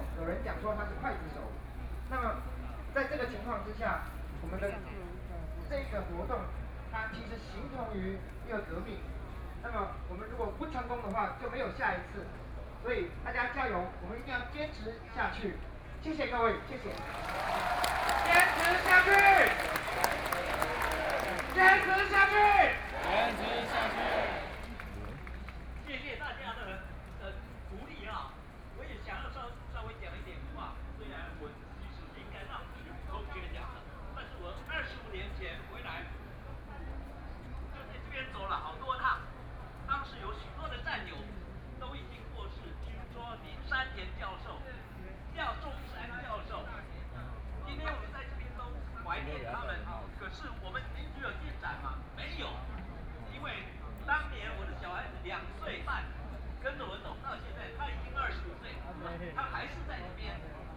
Zhongshan S. Rd., Taipei City - Protest
Sunflower Movement, More than fifty thousand people attended, All the streets are packed with people nearby